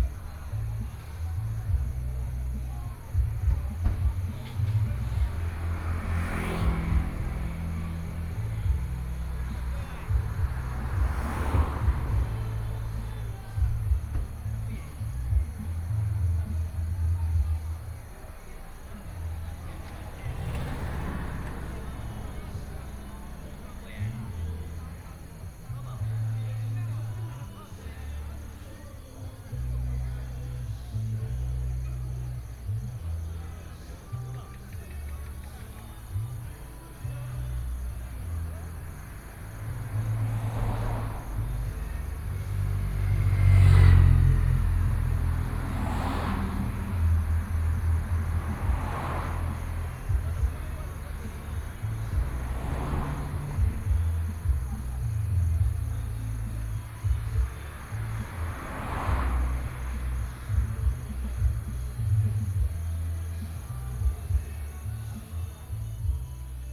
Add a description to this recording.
At the roadside, Traffic Sound, Wedding Banquet